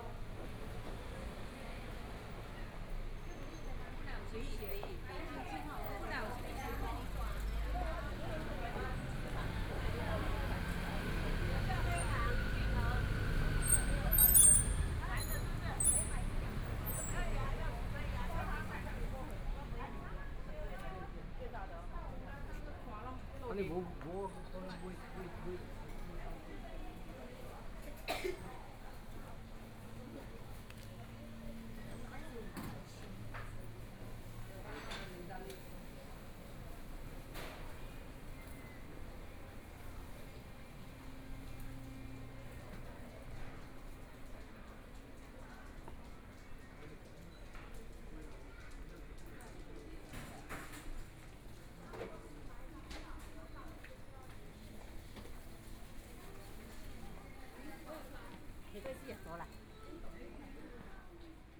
晴光商圈, Taipei - Night market
walking in the Night market, Traffic Sound, Binaural recordings, Zoom H4n+ Soundman OKM II